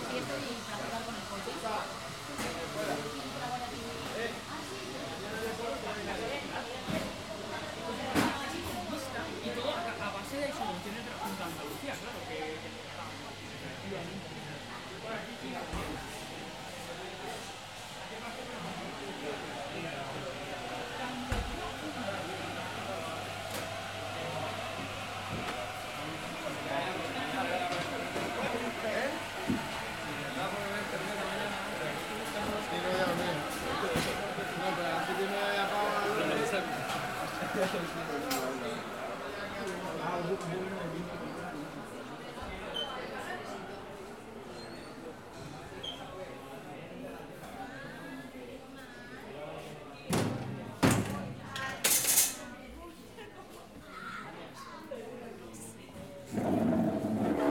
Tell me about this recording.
This audio shows a walk through the faculty cafeteria. You can hear: - Entrance door sound, - Sound of cooking (dishes washing, glasses crashing, cutlery...) - Coffee maker sound, - People talking, - Sound of chairs crawling, - Trolley sound for moving trays, - Exit door sound, - Cristina Ortiz Casillas, - Daniel Deagurre León, - Erica Arredondo Arosa, Gear: - Zoom H4n.